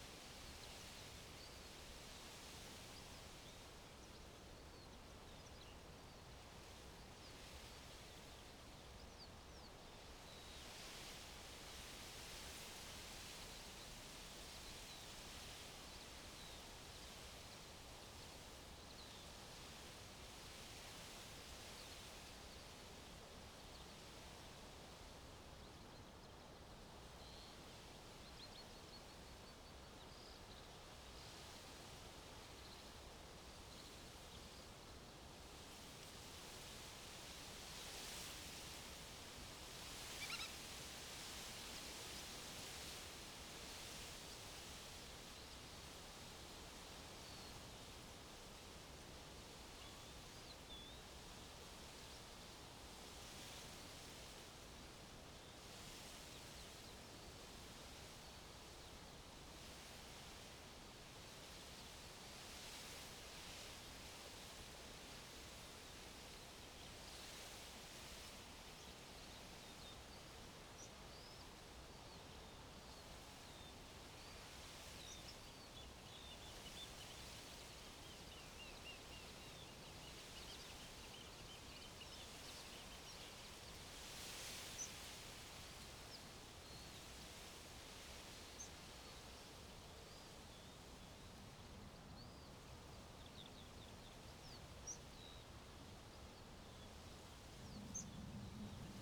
the city, the country & me: june 24, 2015